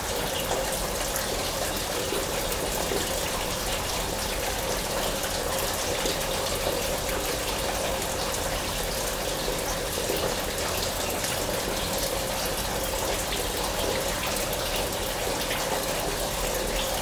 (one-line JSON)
{"title": "Volmerange-les-Mines, France - Eduard Stollen mine", "date": "2016-10-08 10:50:00", "description": "We are here in an extremely hard to reach mine. We opened the gates, in aim to let the water going out. Indeed, this mine was completely-totally flooded. We are here the first to enter inside this tunnel since decades, perhaps more. This is here the sounds of the walls oozing. Water oozes from the walls everywhere and fall in tubes, collecting water. After our visit, we closed the gates and slowly, the water flooded the tunnel again, letting the mine to its quiet death.", "latitude": "49.44", "longitude": "6.08", "altitude": "407", "timezone": "Europe/Paris"}